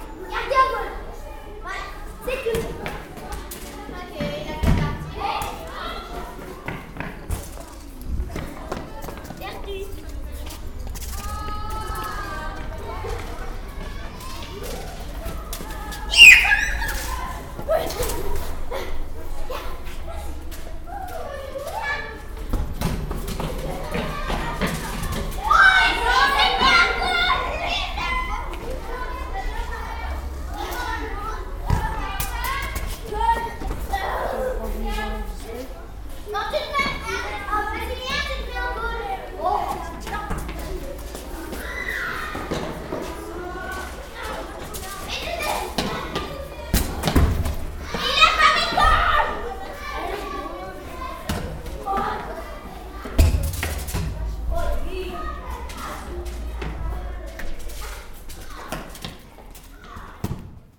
Court-St.-Étienne, Belgique - La cour de récréation
Children playing in a school. It's the "college St-Etienne" and they are playing something like football.